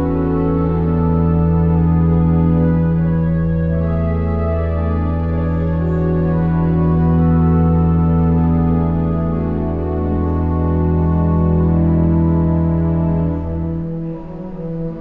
St Josef, Hamm, Germany - outside the church walking in
lingering for a moment outside the church, quiet and birds over the playground across the street, while activities picking up at nearby Victoria square, sounds of the church organ from inside, I walk in through the open doors….